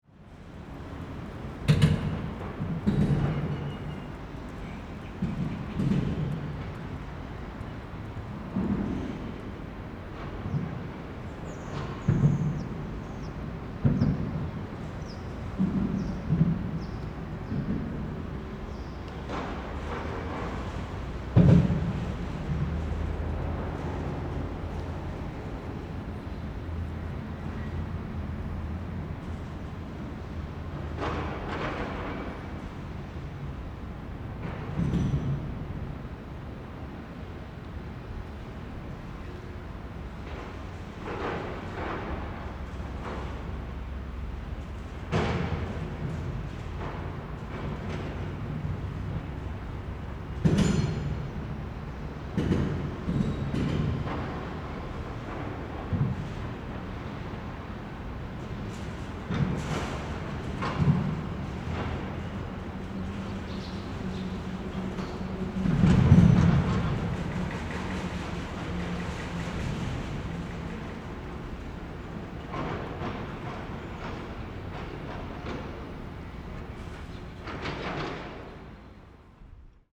Luzhou Dist., New Taipei City, Taiwan - under the Viaduct
under the Viaduct
Rode NT4+Zoom H4n